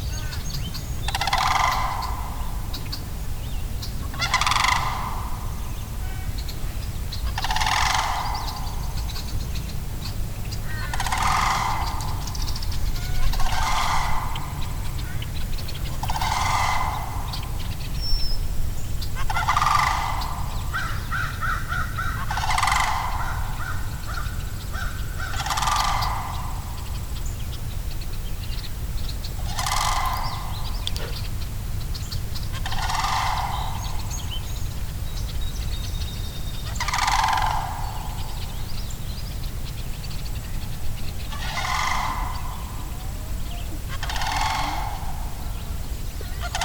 Grass Lake Sanctuary - Sandhill Cranes
The call of a sandhill crane as it walked with its partner while eating cut grass at Grass Lake Sanctuary.
WLD, Grass Lake Sanctuary, phonography, birds, sandhill cranes, Tom Mansell